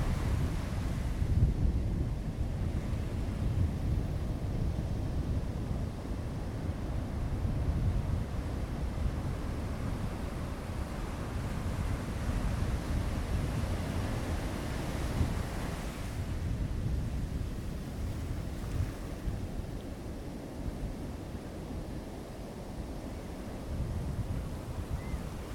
Lagoinha do Leste, Florianópolis, Santa Catarina, Brazil - Lagoinha do Leste beach sound
The sound of the Lagoinha do Leste beach before the rain drops.
recorded with a ZOOM H1
Região Sul, Brasil, 4 April